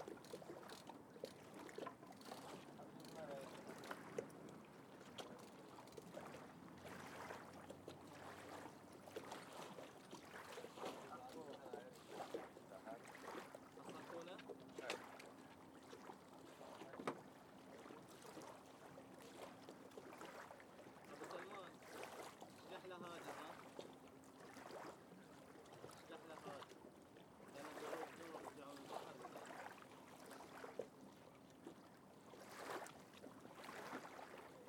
Askar, Bahreïn - Port de pêche - Askar - Bahrain
Askar - Barhain - ambiance du soir - port de pêche
المحافظة الجنوبية, البحرين, 29 May 2021, 19:00